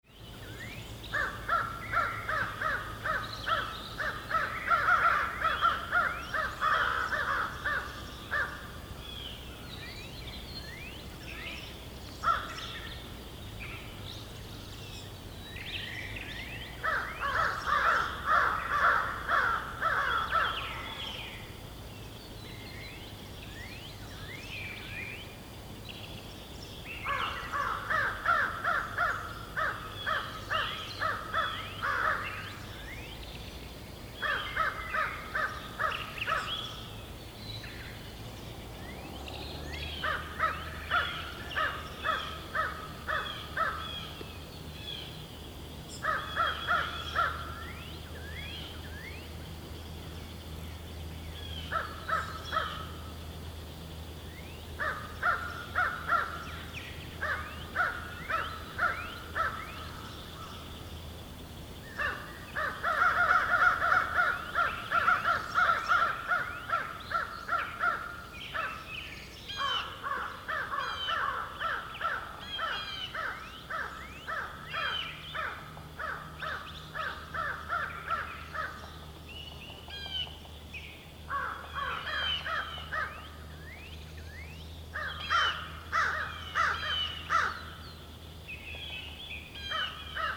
Bolivar, USA - Countryside spring in Missouri, USA
A group of crow is singing in the wood, some birds and insect in the countryside, a road in the background sometimes. Sound recorded by a MS setup Schoeps CCM41+CCM8 Sound Devices 788T recorder with CL8 MS is encoded in STEREO Left-Right recorded in may 2013 in Missouri, close to Bolivar (and specially close to Walnut Grove), USA.